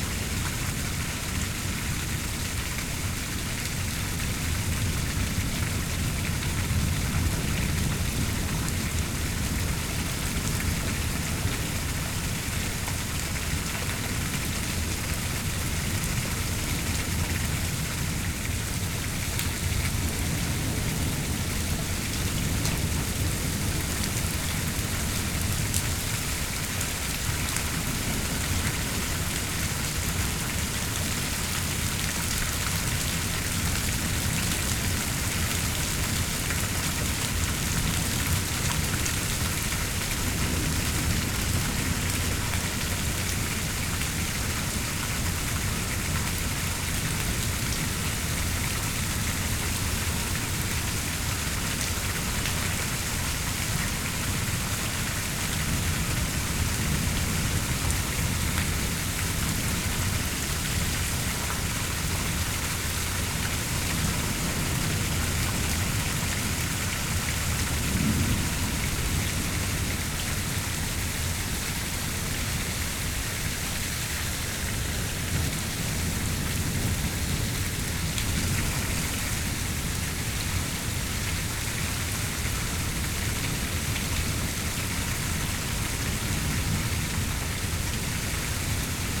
Waters Edge - Severe Warned Storm
A line of severe warned storms came across the metro in the evening which put us under a Sever Thunderstorm warning and a Tornado warning for the adjacent county. The outdoor warning sirens can be heard early in the recording for the Severe Thunderstorm warning and then later from the adjacent county for the tornado warning. Rainfall rates at the beginning of the storm were measured by my weather station at 8.6 inches per hour and we got about 1.25 inches in a half hour. Luckily we didn't get much wind so there was no damage.
Minnesota, United States, 11 May 2022, 20:00